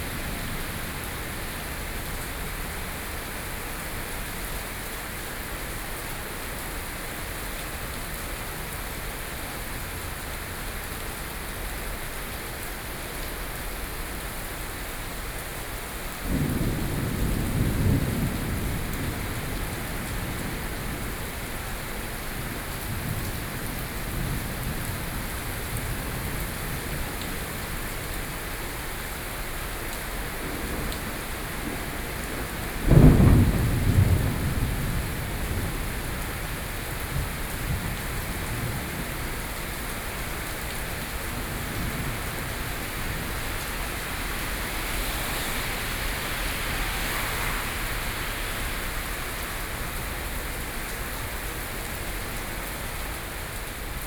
Taipei, Taiwan - Before the coming storm
Before the coming storm, Sony PCM D50 + Soundman OKM II